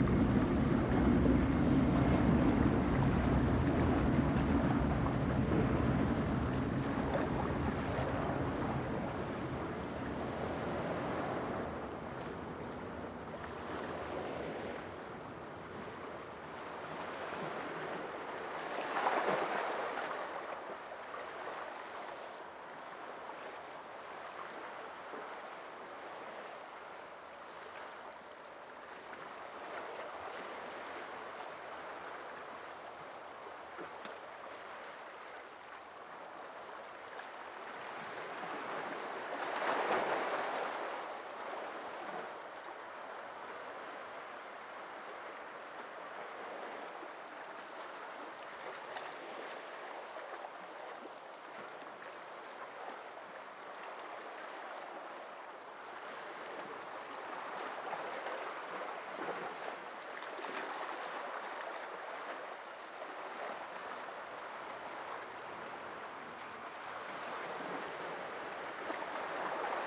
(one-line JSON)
{"title": "El Prat de Llobregat, Espagne - Llobregat - Barcelone - Espagne - Plage de la Roberta", "date": "2022-07-24 14:30:00", "description": "Llobregat - Barcelone - Espagne\nPlage de la Roberta\nAmbiance de la plage, sur la digue, au bout de la piste de décollage de l'aéroport.\nZOOM F3 + AKG 451B", "latitude": "41.28", "longitude": "2.07", "timezone": "Europe/Madrid"}